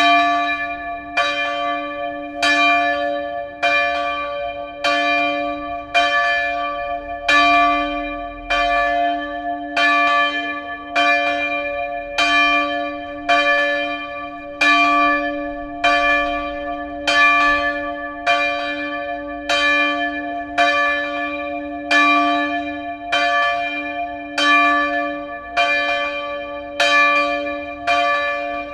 Bruxelles, Rue du melon, les cloches de la Paroisse Sainte Marie / Brussels, Saint Marys Church, the bells.
Vorst, Belgium, 12 September 2009